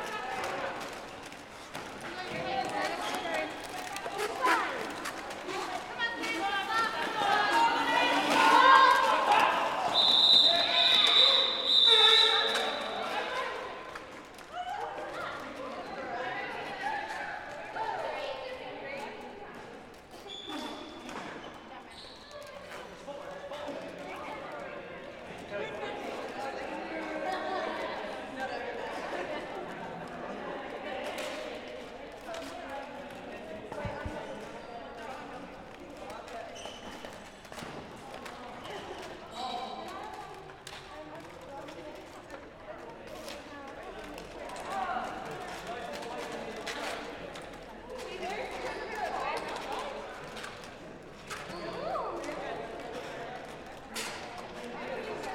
This is the sound of Oxford Roller Derby practicing. The team is nearly all-female and I have been interviewing comrades within it about their relationship to sportswear and the fashions associated with this sport for my project, Fabric of Oxford. It is a fantastically violent contact sport and I was really interested to hear how much attraction this holds for women in particular.
Oxfordshire, UK